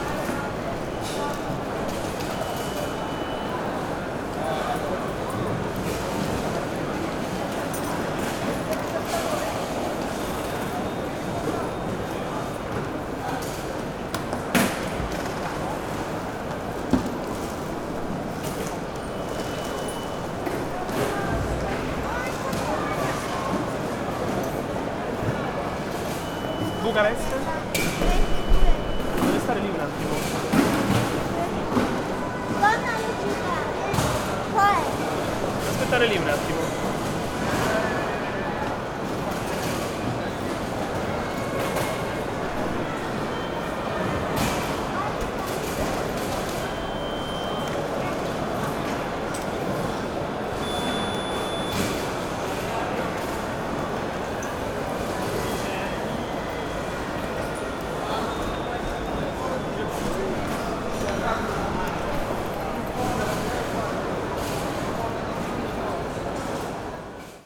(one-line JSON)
{"title": "catania airport - safety check", "date": "2009-10-27 18:30:00", "description": "catania airport, safety checks at the gate", "latitude": "37.47", "longitude": "15.07", "altitude": "7", "timezone": "Europe/Berlin"}